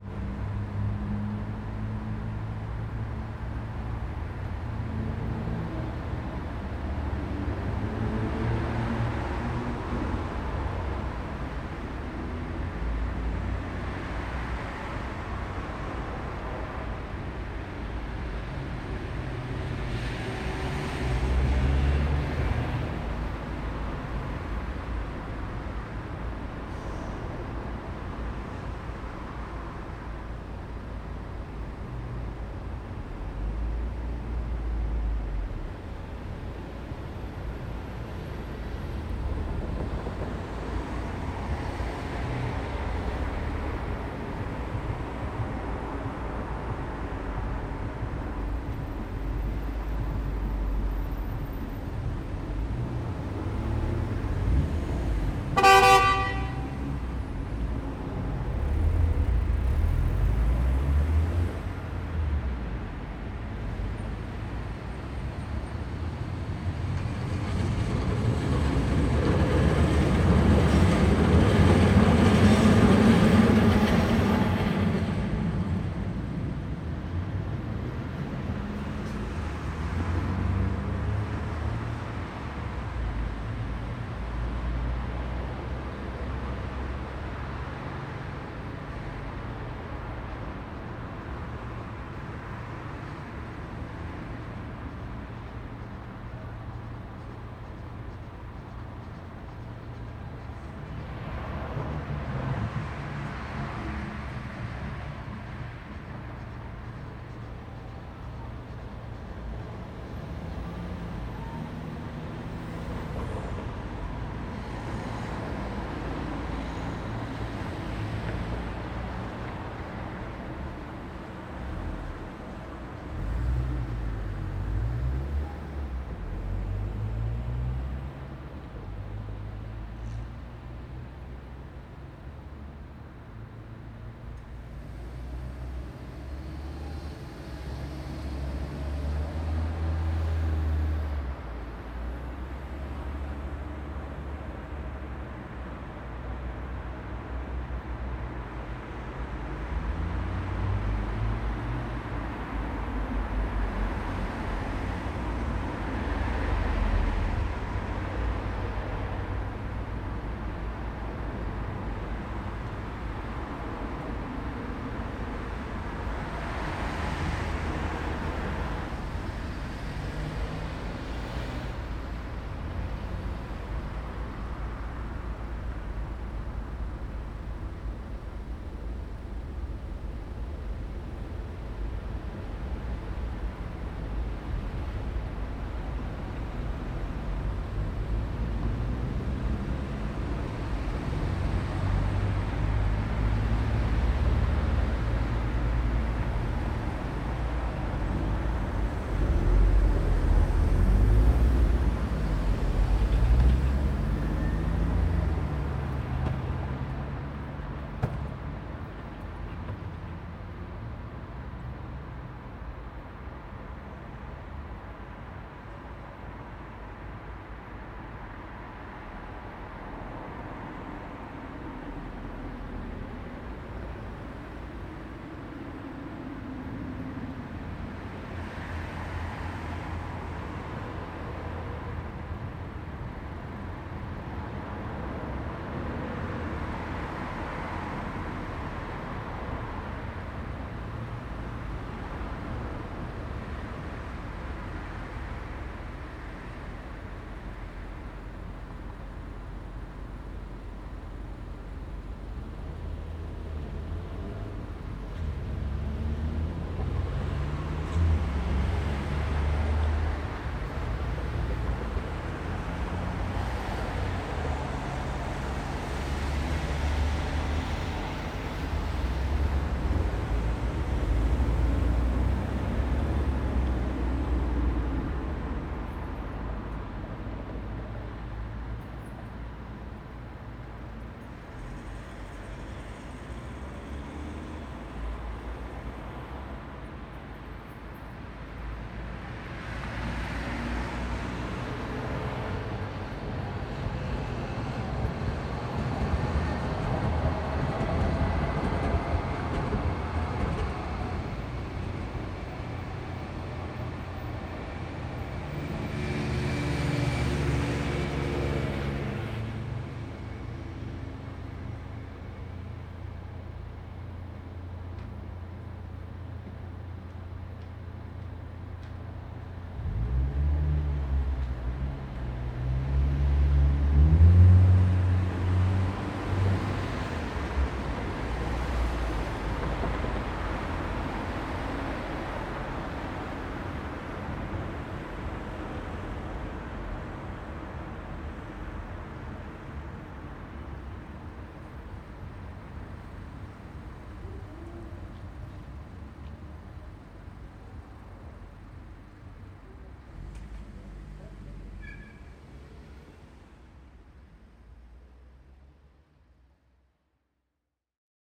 Hügelstraße, Dornbusch, Frankfurt am Main, Deutschland - Hügelstraße 115, Frankfurt/Main - traffic at night
Hügelstraße 115, Frankfurt/Main - traffic at night. Recorded from window. [I used the Hi-MD-recorder Sony MZ-NH900 with external microphone Beyerdynamic MCE 82]